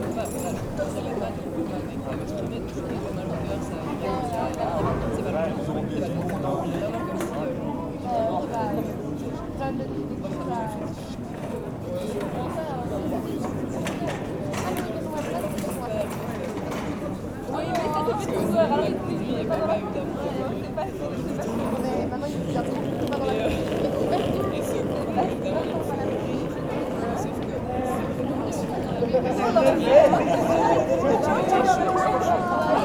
Centre, Ottignies-Louvain-la-Neuve, Belgique - Bars terraces
On the first sunny sunday of this year, people are lazying with great well-being on the bars terraces.
Ottignies-Louvain-la-Neuve, Belgium, 13 March 2016, 15:45